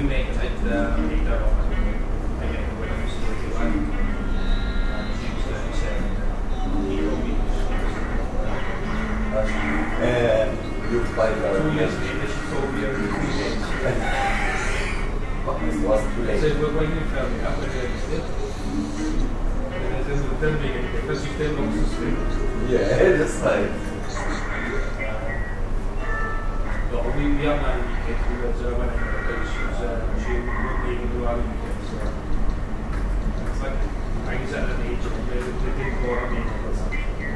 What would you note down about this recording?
Hotel Bar, gambling machine playing The Pink Panther Theme